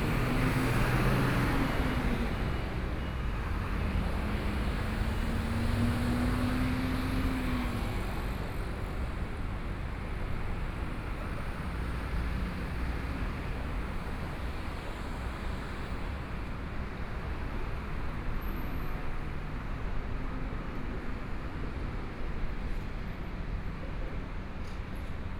walking in the Songjiang Rd.., Traffic Sound, toward to Minzu E. Rd., Binaural recordings, Zoom H4n+ Soundman OKM II
Taipei City, Taiwan, 20 January, 14:35